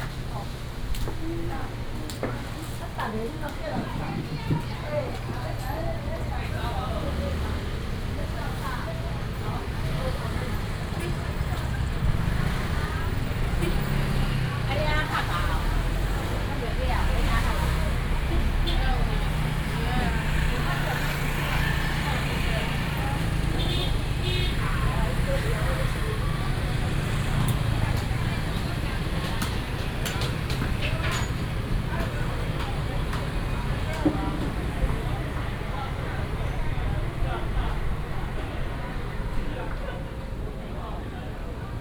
{"title": "Nantian Market, East Dist., Chiayi City - Stock market", "date": "2017-04-18 10:12:00", "description": "In the Stock market, The whole is finishing the goods ready to rest, Traffic sound", "latitude": "23.47", "longitude": "120.46", "altitude": "39", "timezone": "Asia/Taipei"}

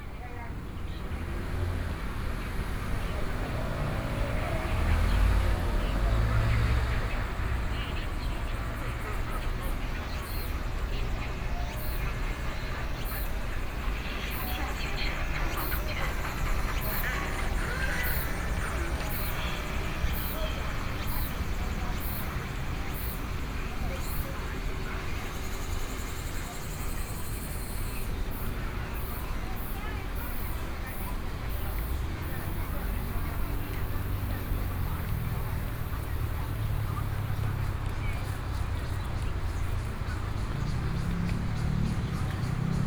{"title": "Hutoushan Park - walk", "date": "2013-09-11 09:05:00", "description": "walking into the Park, Broadcasting, Dogs barking, Sony PCM D50 + Soundman OKM II", "latitude": "25.00", "longitude": "121.33", "altitude": "127", "timezone": "Asia/Taipei"}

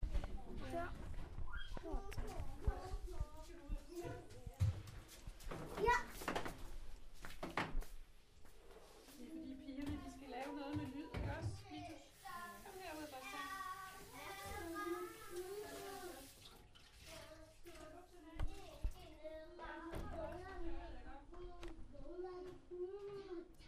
{"title": "Anholt Børnehave", "date": "2011-03-22 12:11:00", "description": "Børn der snakker", "latitude": "56.70", "longitude": "11.55", "altitude": "10", "timezone": "Europe/Copenhagen"}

